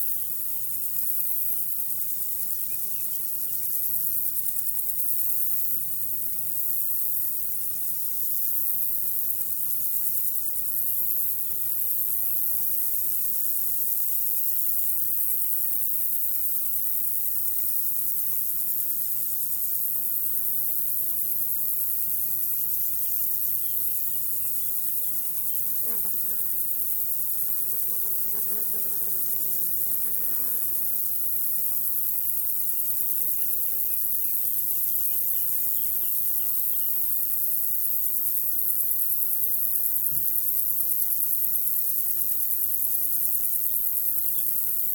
Ontex, France - Prairie stridulante
Une prairie aux hautes herbes sèches non cultivée. Symphonie de criquets et sauterelles.
France métropolitaine, France